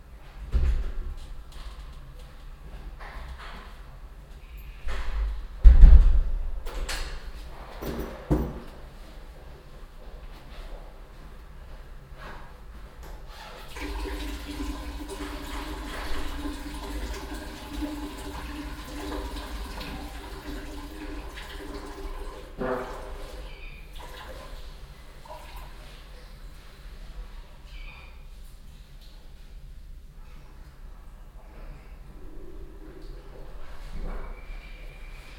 klanginstallation und toilettenalltag in der vw autostadt
soundmap:
social ambiences, topographic field recordings